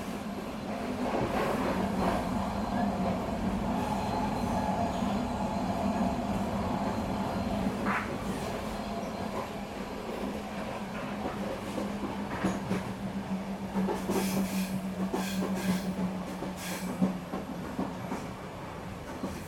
on the train through Lasko, Slovenia
sound from the train as we pull into the station